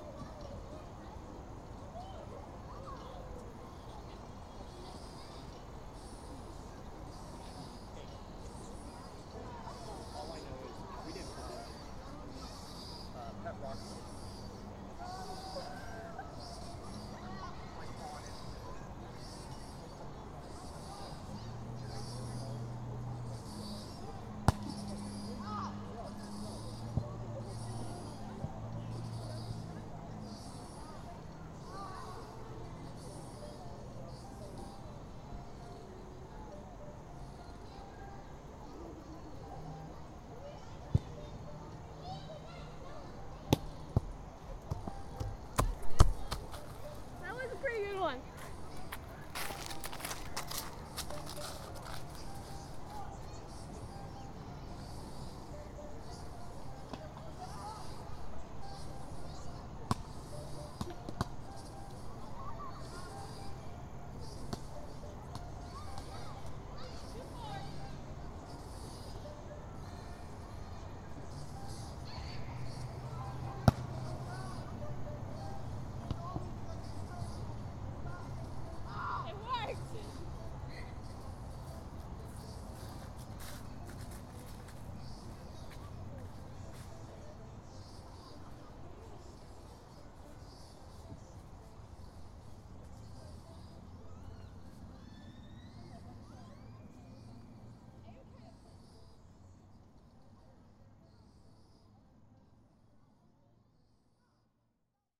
Roswell Rd, Marietta, GA, USA - East Cobb Park - Field
The edge of a park's playing field. There were some older children kicking around a soccer ball, and you can hear kids on the playground swing set to the left. A child can be heard playing the piano under the gazebo behind the recorder. It was a cool, sunny autumn day, and people were out walking and enjoying the weather.
Recorded with the unidirectional mics of the Tascam Dr-100mkiii.